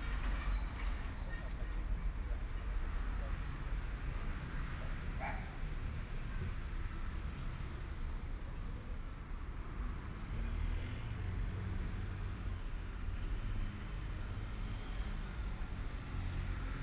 Kaifeng St., Taitung City - abandoned railroad tracks
Walking on abandoned railroad tracks, Currently pedestrian trails, Dogs barking, Bicycle Sound, People walking, Binaural recordings, Zoom H4n+ Soundman OKM II ( SoundMap2014016 -23)